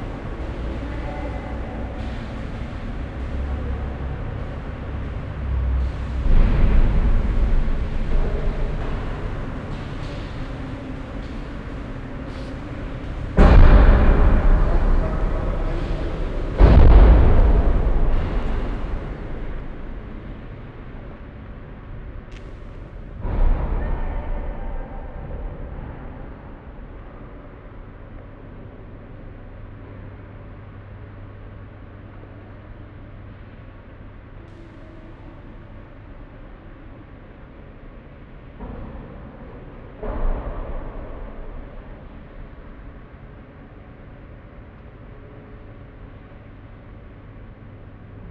Altstadt, Düsseldorf, Deutschland - Düsseldorf, parking garage
Inside an almost empty parking garage. The deep resonating sound of car motors in the distant, steps and a car starting and exiting the level.
This recording is part of the exhibition project - sonic states
soundmap nrw - topographic field recordings, social ambiences and art places